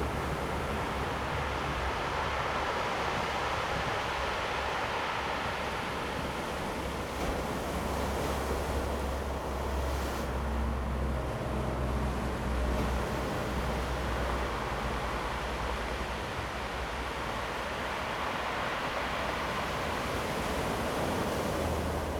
竹湖村, Changbin Township - Thunder and waves
Sound of the waves, Traffic Sound, Thunder
Zoom H2n MS+XY